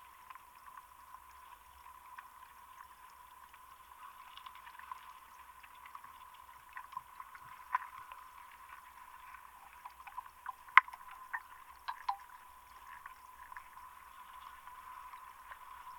Aukštumala raised bog, Lithuania, dystrophic lake
The Aukštumala raised bog. Hydrophone in the little lake.